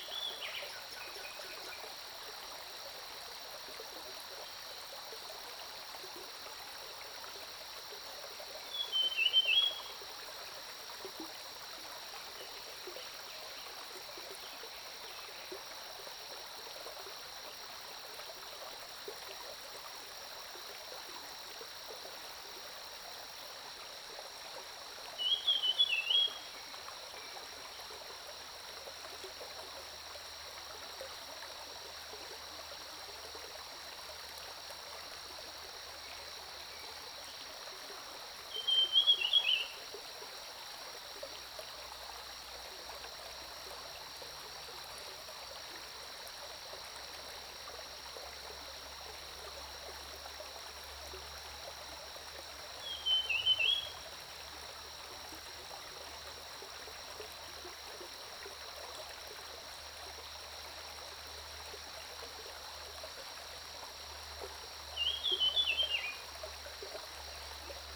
中路坑溪, 桃米里 Puli Township - Stream and Birdsong
Early morning, Bird calls, Brook
Zoom H2n MS+XY
Nantou County, Taiwan